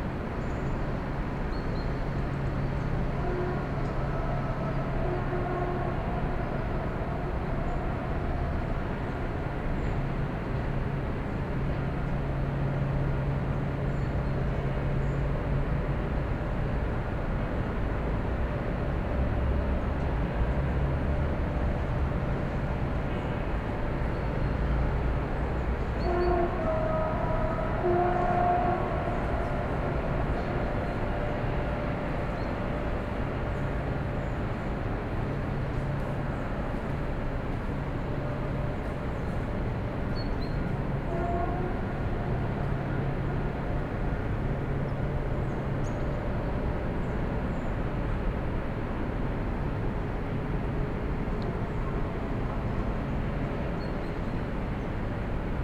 Ljubljana Castle - city soundscape at 11am
city heard from Ljubljana castle at 11am: chuchbells, trains, cars...
(Sony PCM D50, DPA4060)